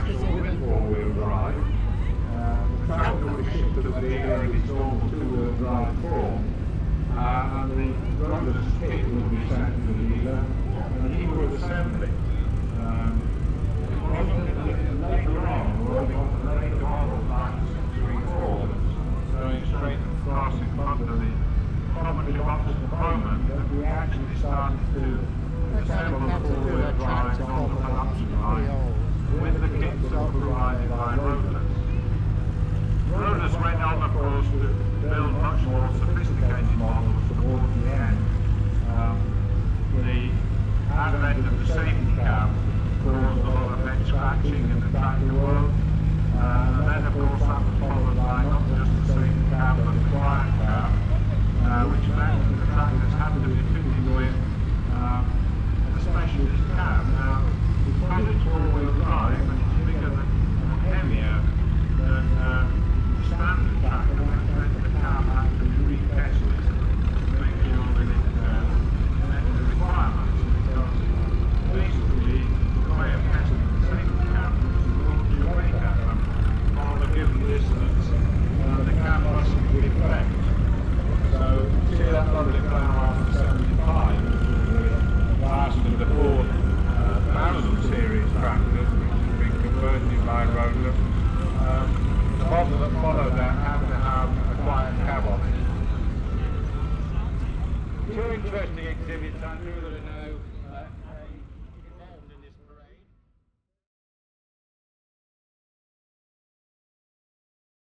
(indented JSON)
{
  "title": "Steam Rally, Welland, Worcestershire, UK - Rally",
  "date": "2019-07-28 14:32:00",
  "description": "A walk around part of the Welland Steam Rally including road building, ploughing, engines modern and old, voices, brass band, steam organ.",
  "latitude": "52.06",
  "longitude": "-2.29",
  "altitude": "36",
  "timezone": "Europe/London"
}